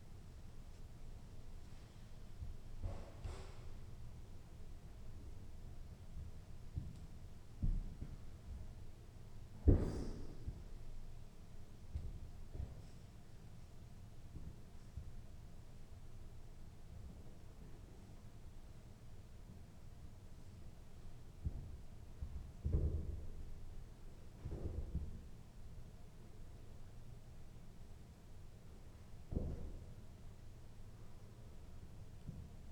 {"title": "Sankt Peter-Ording, Germany - orgelprobe, test of an organ", "date": "2014-08-09 17:40:00", "description": "ein organist betritt die dorfkirche von st. peter ording und beginnt nach einer weile, ein orgelstueck, vermutlich von bach, zu spielen: an organ-player entering the church of st. peter and starting to play a piece presumably by js bach", "latitude": "54.31", "longitude": "8.64", "altitude": "4", "timezone": "Europe/Berlin"}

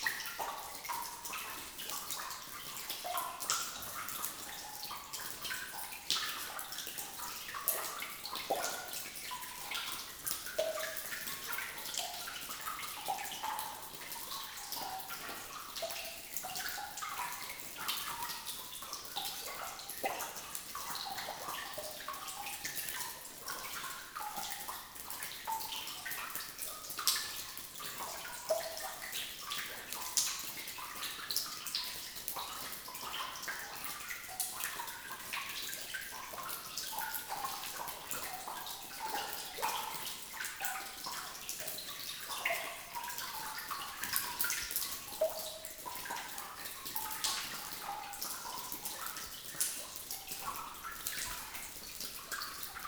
{"title": "Montagnole, France - Quiet atmosphere into the underground mine", "date": "2017-06-06 07:50:00", "description": "Into an underground cement mine, drops are falling into a large lake. It makes a quiet and pleasant sound, with a few reverb as it's a quite big room.", "latitude": "45.53", "longitude": "5.92", "altitude": "542", "timezone": "Europe/Paris"}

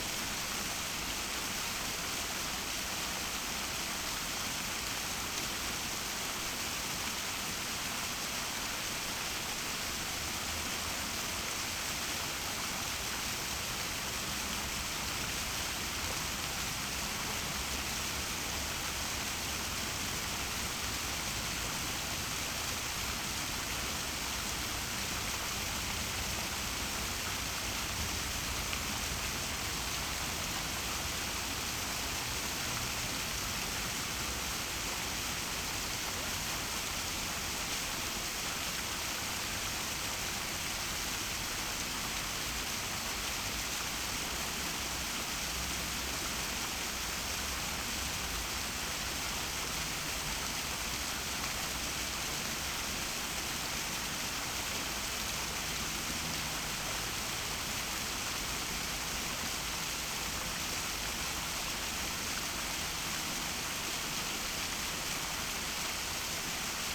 {"title": "berlin, rudolph-wilde-park: hirschbrunnen - the city, the country & me: hart fountain", "date": "2011-09-16 16:19:00", "description": "the city, the country & me: september 16, 2011", "latitude": "52.48", "longitude": "13.34", "altitude": "41", "timezone": "Europe/Berlin"}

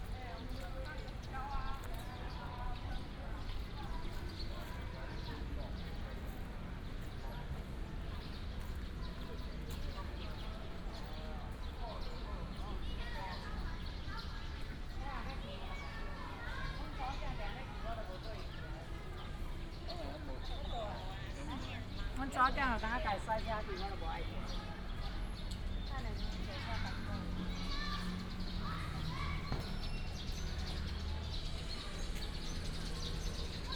{"title": "樹德公園, Datong Dist., Taipei City - Walking in the Park", "date": "2017-04-09 16:51:00", "description": "Walking in the Park, Traffic sound, The plane flew through, sound of birds", "latitude": "25.07", "longitude": "121.52", "altitude": "16", "timezone": "Asia/Taipei"}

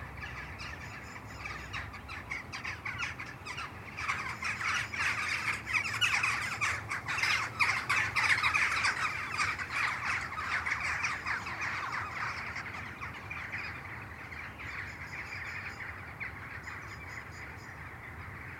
{"title": "No. 1 Henley Cottage, Acton Scott, Shropshire, UK - Birds, Wind and Chores, recorded from the casement window", "date": "2016-03-02 18:30:00", "description": "This is a recording made from the casement windows of an old Victorian cottage in which I was staying in order to record the sounds of the domestic interior of a period property. It was quite cold and dark and I was ill when I was there. But when I was organising my things for the final night of my stay, I noticed the amazing bird sounds from the window of the bedroom on the very top floor. It was an incredible sound - the starlings passing, the wind howling, even the blurry and annoying sounds of the traffic and planes on nearby roads... I wanted to record it. There is a lot of wind in the recording and I could have had the levels a little higher to get a better noise/signal ratio, but the starlings passing over at around 5-6 minutes in are amazing. You can hear me going out to the coal bunker as well, and filling the enamel water jug. Recorded with FOSTEX FR-2LE using Naiant X-X omni-directionals.", "latitude": "52.49", "longitude": "-2.81", "altitude": "161", "timezone": "Europe/London"}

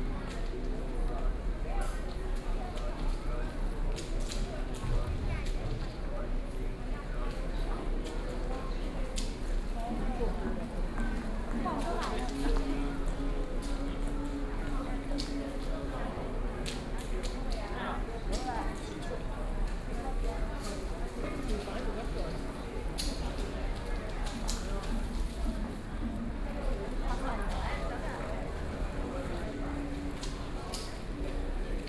{"title": "行天宮, Taipei City - Walking in the temple", "date": "2014-02-27 16:13:00", "description": "Walking in the temple, Environmental sounds\nBinaural recordings", "latitude": "25.06", "longitude": "121.53", "timezone": "Asia/Taipei"}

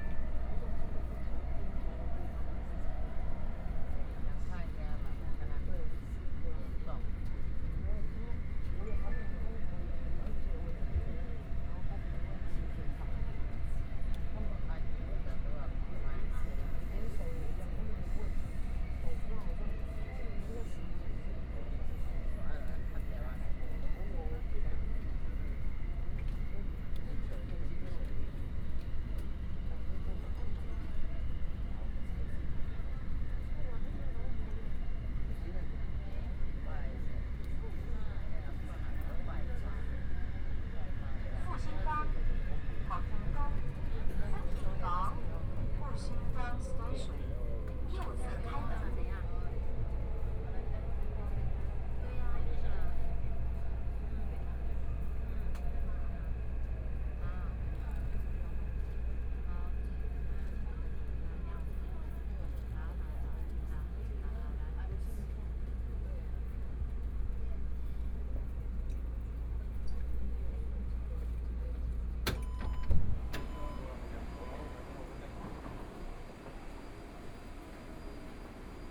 {"title": "Beitou, Taipei City - Tamsui Line (Taipei Metro)", "date": "2014-01-21 18:17:00", "description": "from Qiyan Station to Fuxinggang Station, Binaural recordings, Zoom H4n+ Soundman OKM II", "latitude": "25.13", "longitude": "121.50", "timezone": "Asia/Taipei"}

